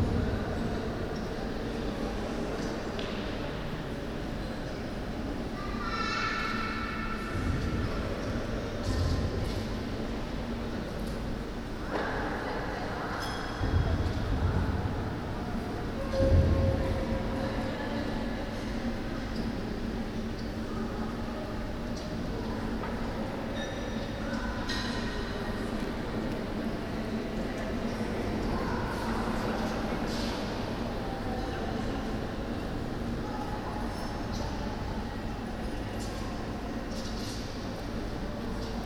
Lille-Centre, Lille, Frankrijk - Central Hall, Palais Des Beaux - Arts
The deafening reverb of the main hall in the Museum of Fine Arts in Lille, France.
It is one of the largest art museums in France and definitely worth a visit. The main source of this noise in this recording is the museum restaurant, located in the hall.
Binaural Recording
Lille, France, 12 August 2016